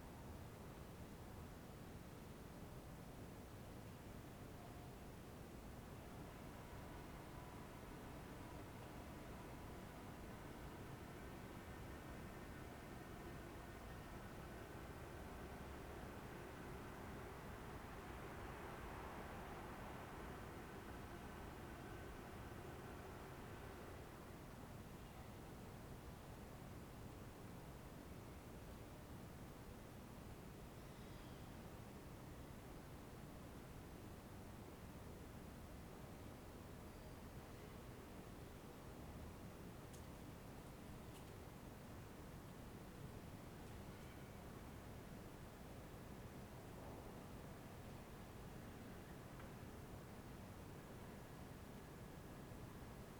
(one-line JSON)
{"title": "Ascolto il tuo cuore, città. I listen to your heart, city. Several chapters **SCROLL DOWN FOR ALL RECORDINGS** - Stille Nacht with howling wolves in the time of COVID19: soundscape.", "date": "2020-12-24 23:47:00", "description": "\"Stille Nacht with howling wolves in the time of COVID19\": soundscape.\nChapter CXLVIX of Ascolto il tuo cuore, città. I listen to your heart, city\nThursday December 24th 2020. Fixed position on an internal terrace at San Salvario district Turin, about six weeks of new restrictive disposition due to the epidemic of COVID19.\nStart at 11:47 p.m. end at 00:17 a.m. duration of recording 29’52”", "latitude": "45.06", "longitude": "7.69", "altitude": "245", "timezone": "Europe/Rome"}